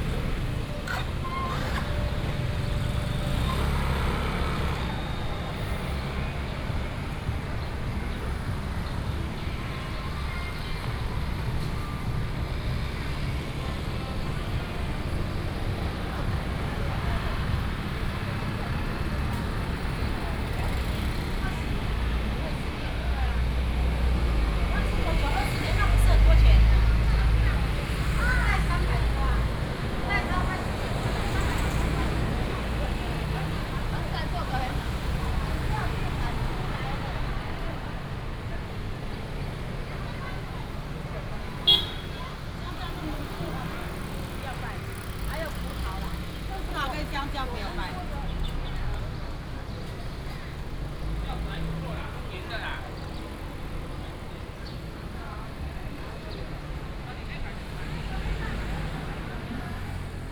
Ln., Zhongzheng Rd., Xinwu Dist. - Walking in the alley
Walking in the alley, Traditional market area, traffic sound
Taoyuan City, Taiwan, July 2017